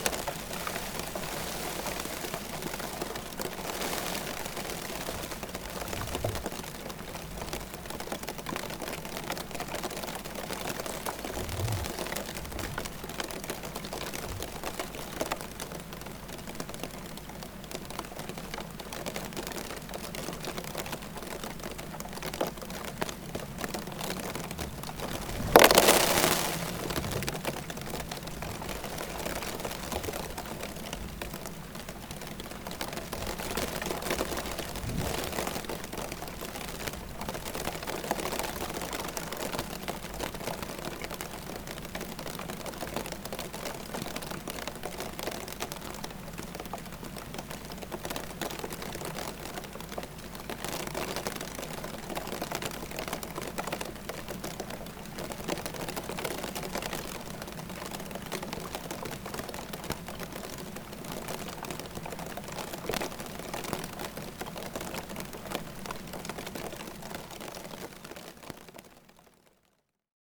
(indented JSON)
{
  "title": "Snow Flakes Falling, Malvern, Worcestershire, UK - Snow Flakes Falling",
  "date": "2020-12-08 14:39:00",
  "description": "Hard to believe but this is a brief snow shower falling onto a metal plate 400mmx400mm with a contact microphone glued to its surface. The location is Vernon's Meadow. Recorded on a MixPre 6 II.",
  "latitude": "52.08",
  "longitude": "-2.32",
  "altitude": "81",
  "timezone": "Europe/London"
}